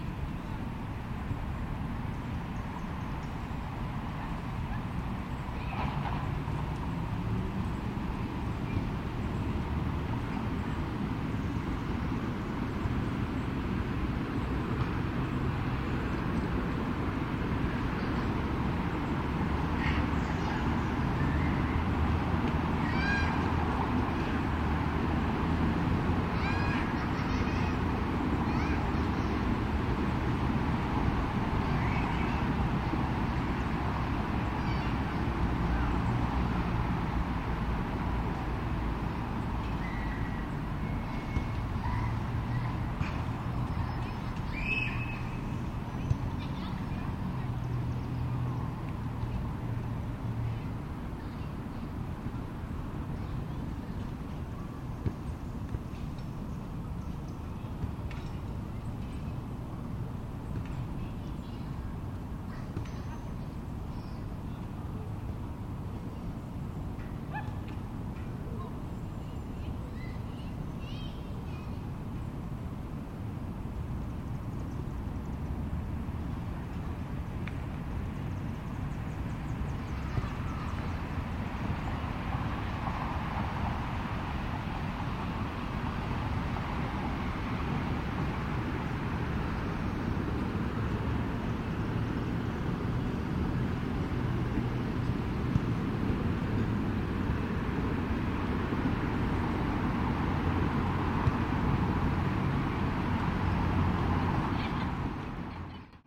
Montreal: Westmount Park (Westmount) - Westmount Park (Westmount)
equipment used: Marantz
Sitting on an bench in Westmount park facing Sherbrooke street
QC, Canada